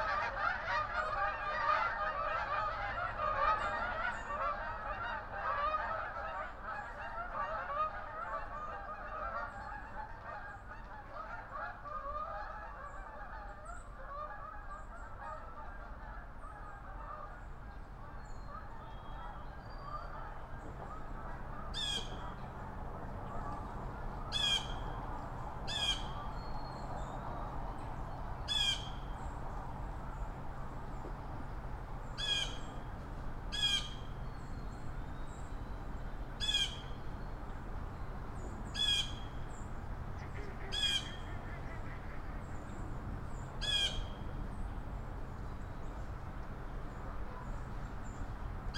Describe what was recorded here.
geese, mallards, blue jays and other birds compete with nearby road traffic noise